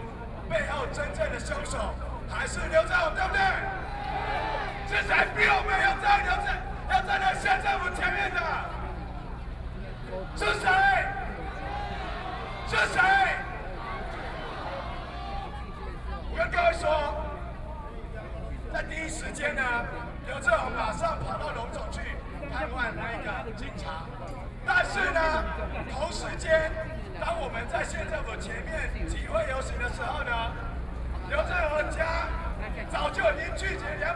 Ketagalan Boulevard, Taipei - Protest
Protest, Sony PCM D50 + Soundman OKM II
18 August 2013, ~20:00